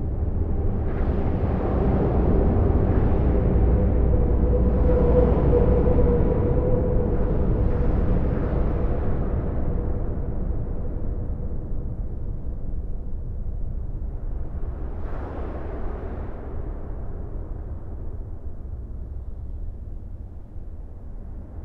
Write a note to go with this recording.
Inside the concrete viaduct overlooking the town of Hayange. The box-girder bridge looks like a large sloping tunnel, in which the noise of the truck is reflected.